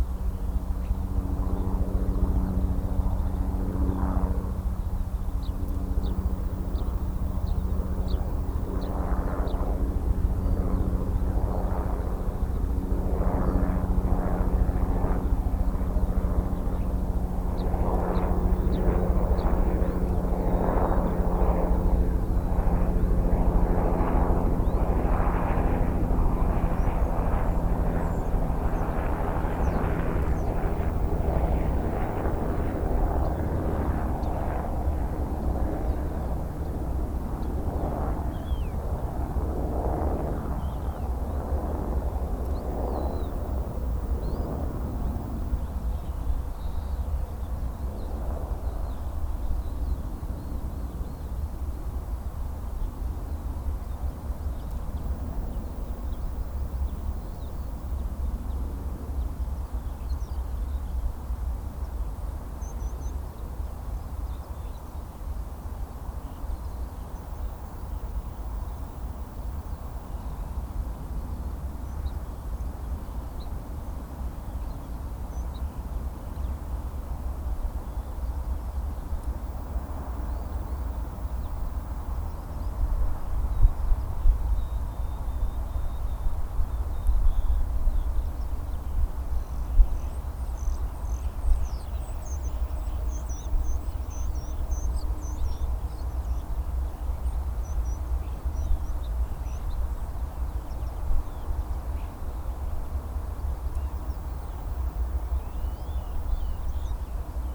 Haidfeld, Vienna City Limits - Haidfeld (schuettelgrat, excerpt)
Fieldrecording, Dusk, Transition